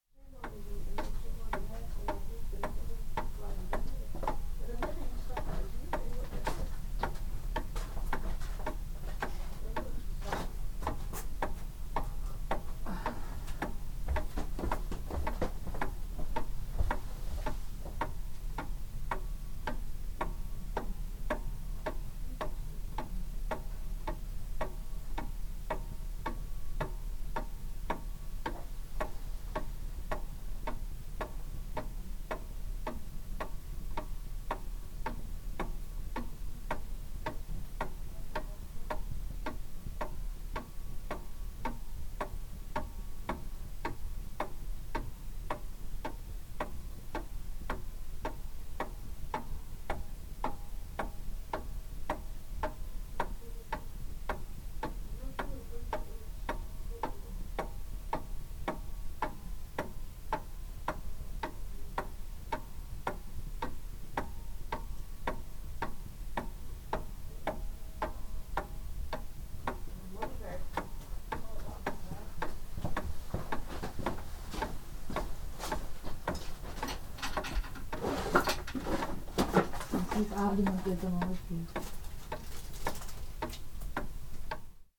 Croft House Museum, Boddam, Dunrossness, Shetland Islands, UK - The old clock ticking on the mantelpiece
This is the sound of the old clock ticking on the mantelpiece in the Croft House Museum. These old clocks were fashionable at one time in Shetland, and you can often hear them ticking away in the background of oral histories from the 1960s and 70s recorded in people's homes. This is just recorded with the onboard microphones of the EDIROL R-09.